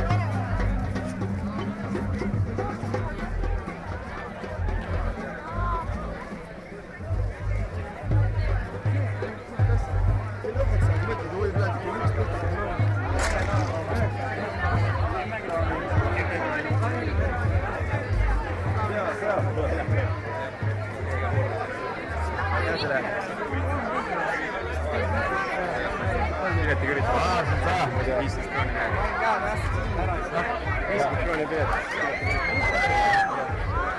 Viljandi lake beach - (binaural) folk jamming
soundwalk through folk music festival unofficial night moods
Viljandimaa, Estonia